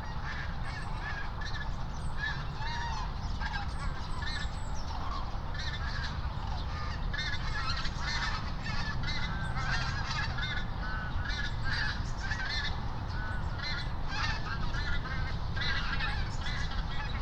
07:00 Berlin, Buch, Moorlinse - pond, wetland ambience